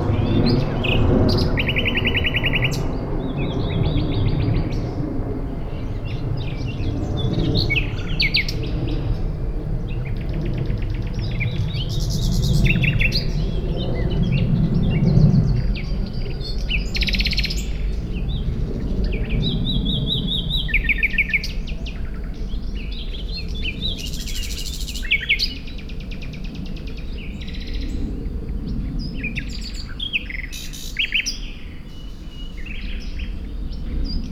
Niévroz, birds near the dead river.
Niévroz, les oiseaux au printemps près de la lône.